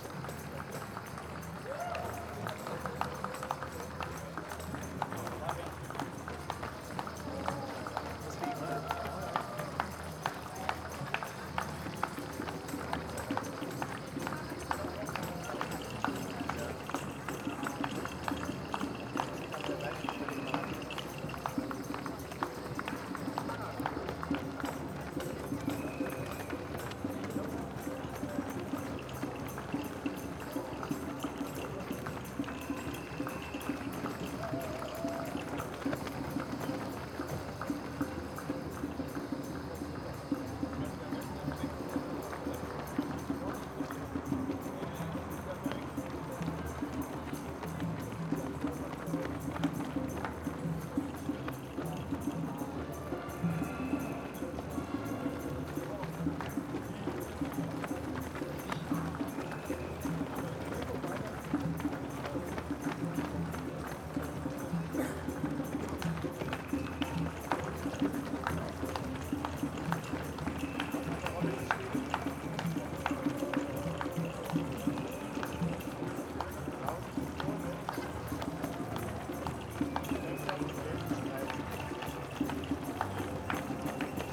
Berlin: Vermessungspunkt Kottbusser Damm 10 - Berlin Marathon sounds
Berlin Marathon, runners running-by, applauding people along the road, music
(Sony PCM D50)
16 September 2018, ~10:00